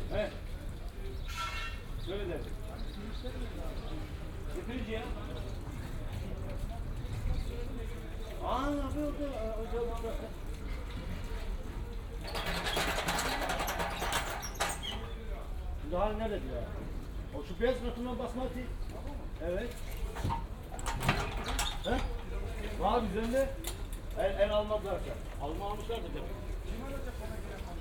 maybachufer, wochenmarkt, fischstand - marktaufbau, fischstand
09.09.2008 8:45
marktaufbau, fischstand, rollwagen fährt vorbei, mann säubert kisten und fische.
before opening, fish stand, man cleans boxes and fishes.